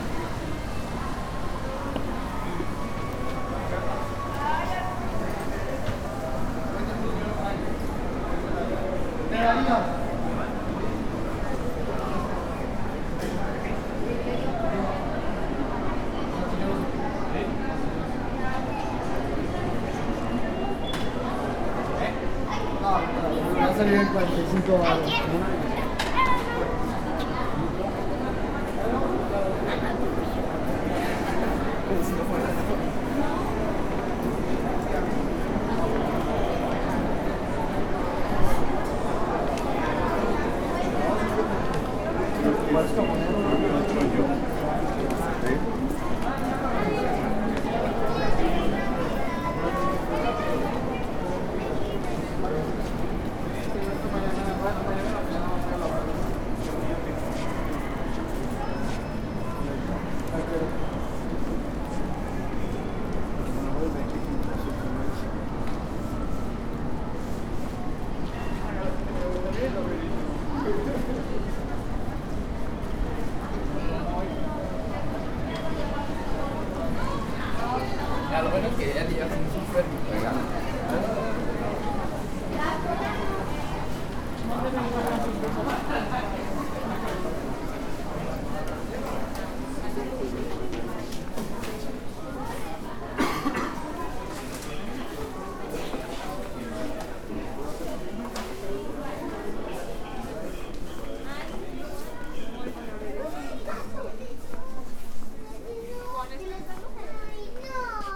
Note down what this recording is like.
Plaza Mayor shopping center in December 2019. From the parking lot, through several aisles, in some stores and back to the car. I made this recording on December 19th, 2019, at 8:16 p.m. I used a Tascam DR-05X with its built-in microphones and a Tascam WS-11 windshield. Original Recording: Type: Stereo, Centro comercial plaza mayor diciembre 2019. Desde el estacionamiento, pasando por varios pasillos, en algunas tiendas y de regreso al coche. Esta grabación la hice el 19 de diciembre 2019 a las 20:16 horas.